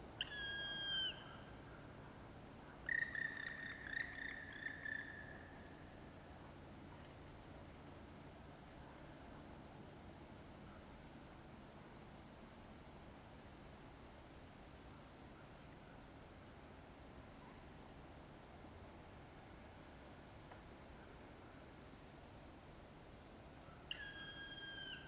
Vyžuonos, Lithuania, black woodpecker
the clearings...lonely black woodpecker